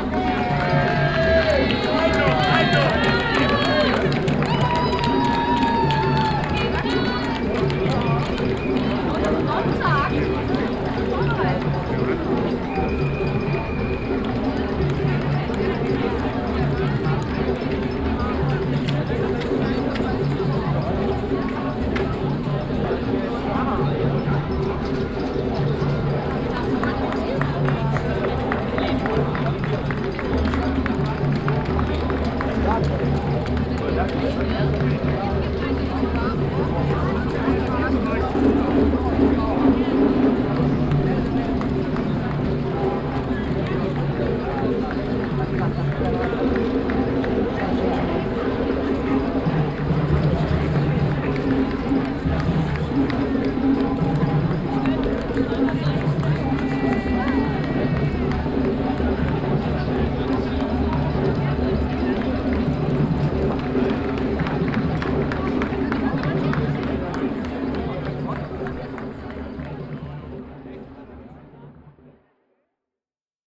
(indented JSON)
{
  "title": "Stadt-Mitte, Düsseldorf, Deutschland - Düsseldorf. Theodor Körner Street, City Marathon",
  "date": "2013-04-28 12:30:00",
  "description": "In the city center during the annual city marathon. The sounds of passengers speaking and encouraging the runners at the street which is blocked for the city. Nearby at the street a group of drummers playing.\nsoundmap nrw - topographic field recordings, social ambiences and art places",
  "latitude": "51.23",
  "longitude": "6.78",
  "altitude": "47",
  "timezone": "Europe/Berlin"
}